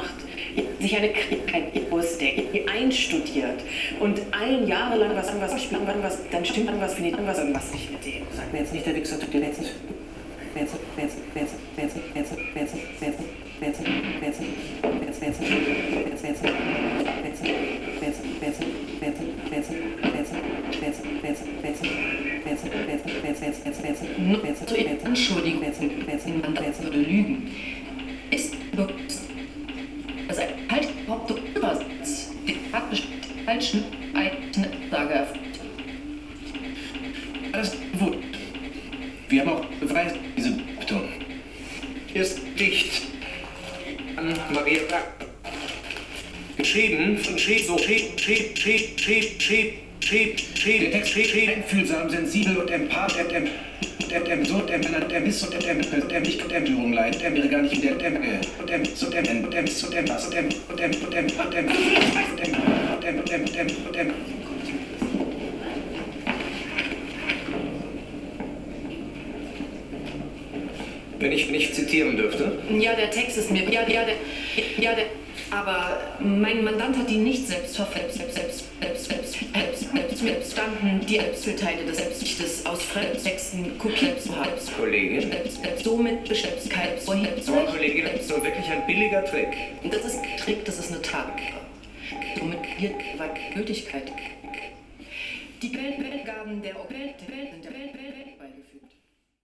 berlin, friedelstraße: das büro - the city, the country & me: television interference
television interference while watching tv. the next day the newspaper reported that the central clock system of the public television station was broken. for more than 30 minutes the problem could not be solved.
the city, the country & me: february 13, 2015